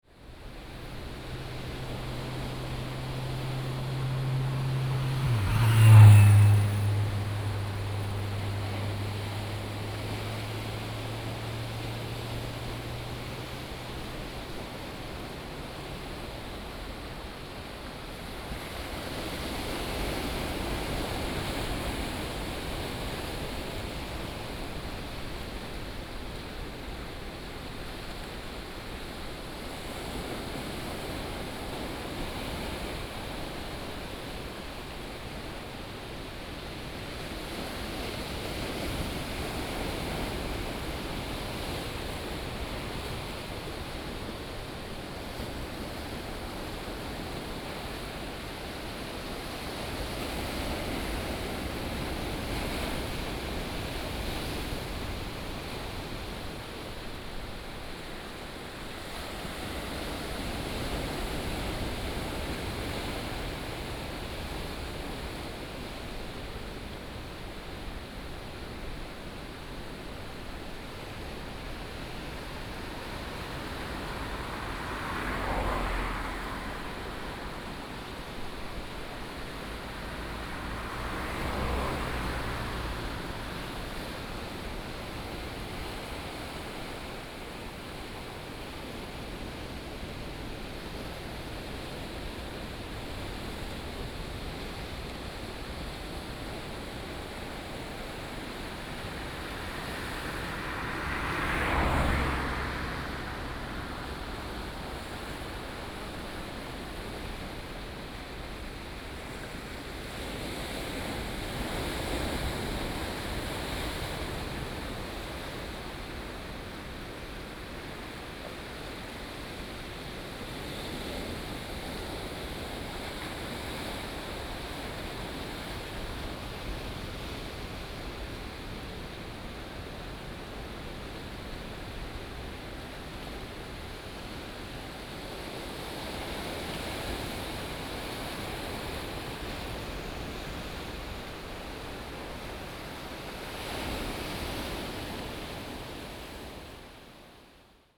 On the coast, Sound of the waves, tide, Traffic sound
Pingtung County, Taiwan, 23 April 2018